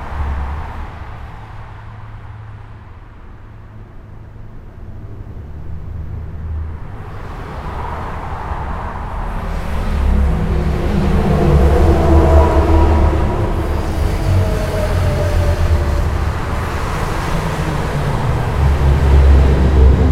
Court-St.-Étienne, Belgique - N25 à Defalque
A very dense trafic on the local highway, called N25. There's a lot of trucks !
Court-St.-Étienne, Belgium, 4 October, 07:00